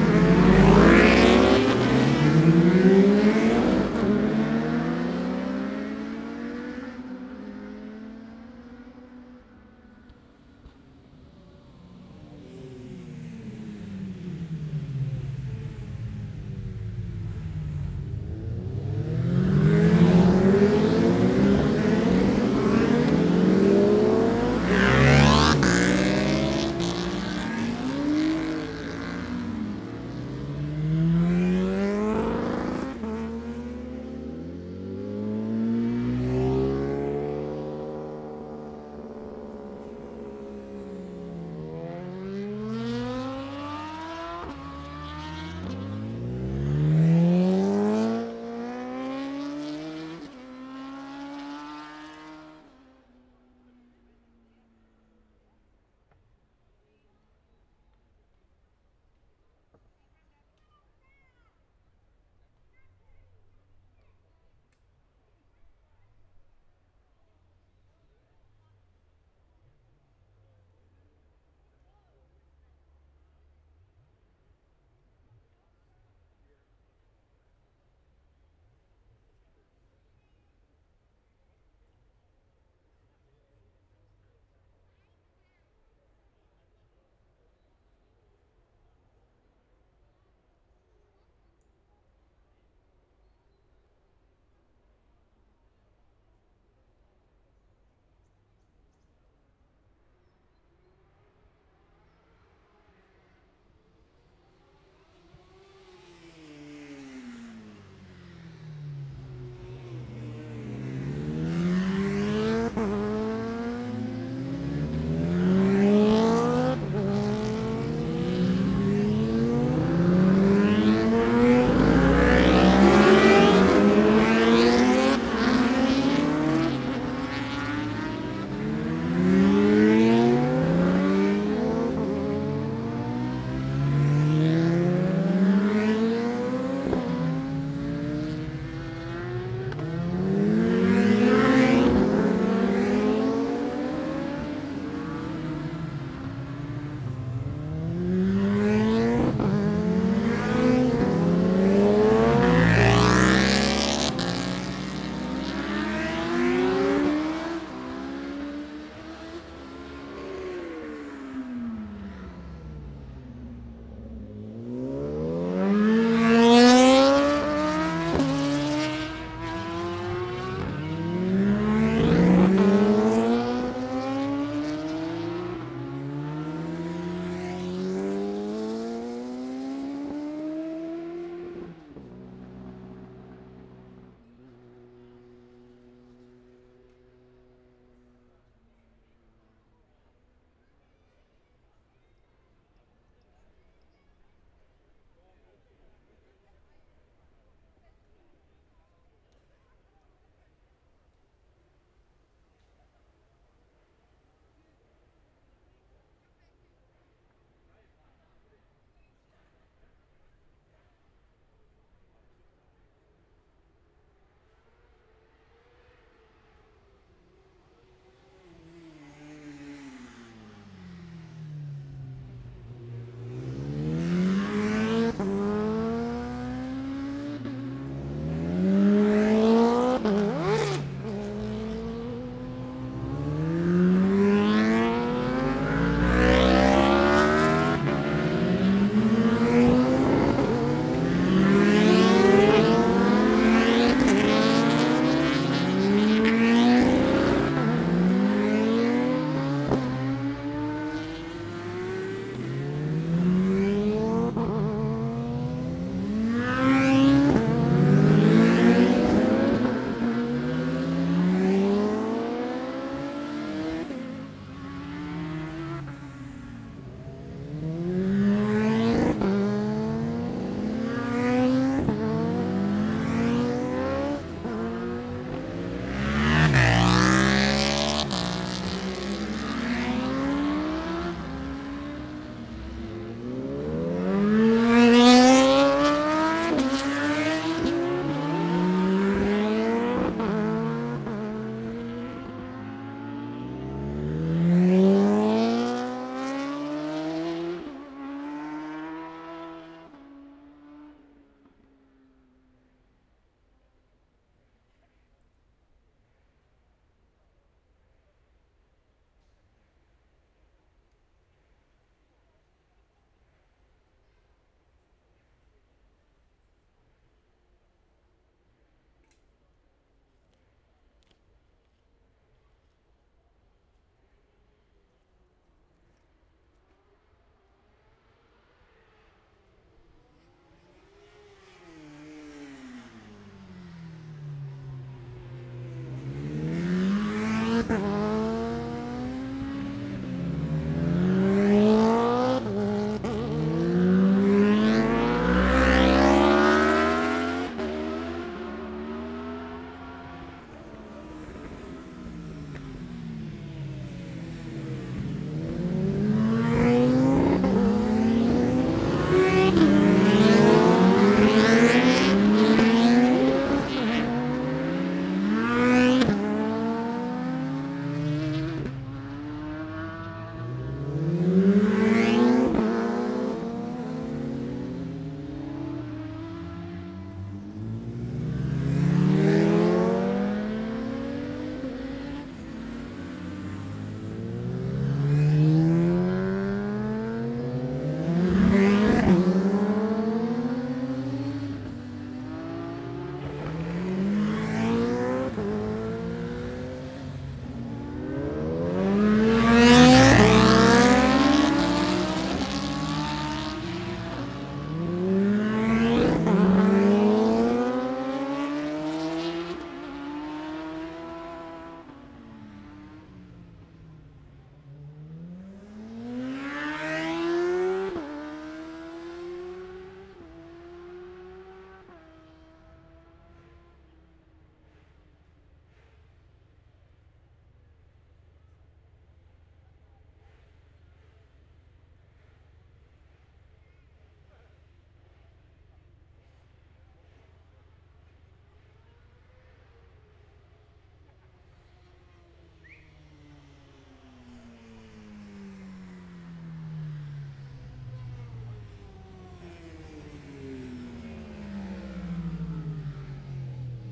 Scarborough District, UK - Motorcycle Road Racing 2016 ... Gold Cup ...
Senior practice ... 1000cc Mere Hairpin ... Oliver's Mount ... Scarborough ... open lavaliers clipped to baseball cap ...